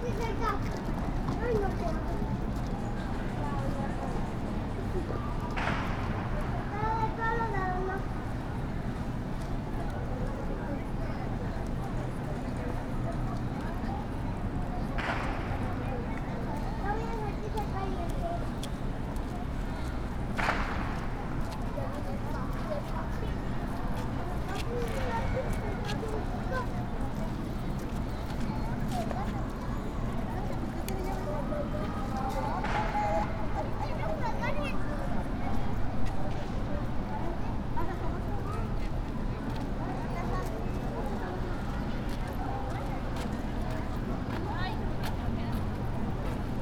Fountain of the Lions.
It wasn't working this time.
I made this recording on july 25th, 2022, at 2:05 p.m.
I used a Tascam DR-05X with its built-in microphones and a Tascam WS-11 windshield.
Original Recording:
Type: Stereo
No estaba funcionando esta vez.
Esta grabación la hice el 25 de julio 2022 a las 14:05 horas.
Guanajuato, México